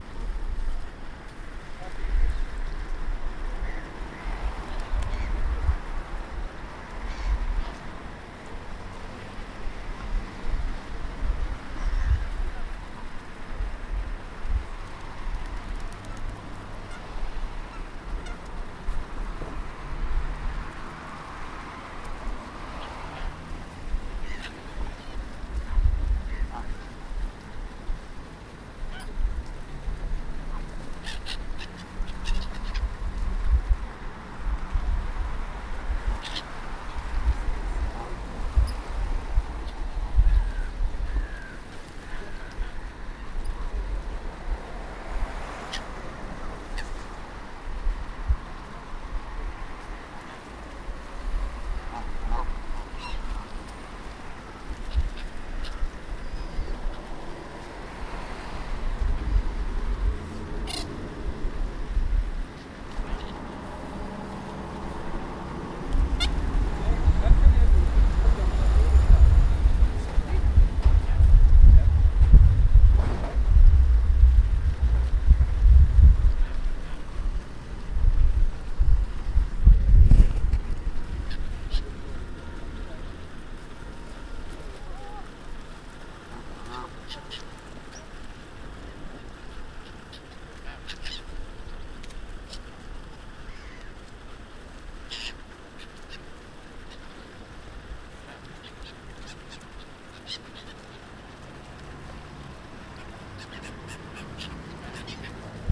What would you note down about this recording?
Birds and cars around the pound